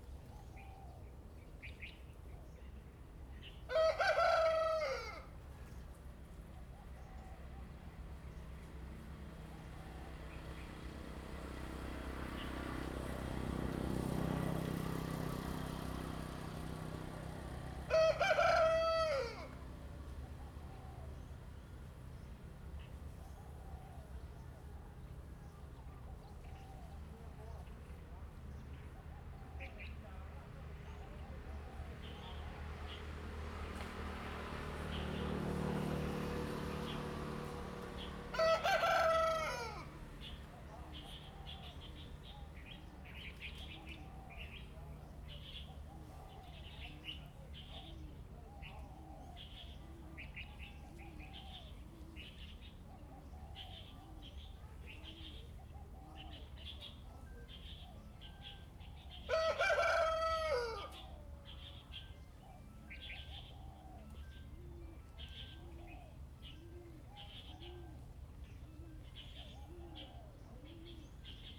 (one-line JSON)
{"title": "天福村, Hsiao Liouciou Island - Crowing and Birds singing", "date": "2014-11-02 08:22:00", "description": "Crowing sound, Birds singing\nZoom H6 +Rode NT4", "latitude": "22.33", "longitude": "120.36", "altitude": "37", "timezone": "Asia/Taipei"}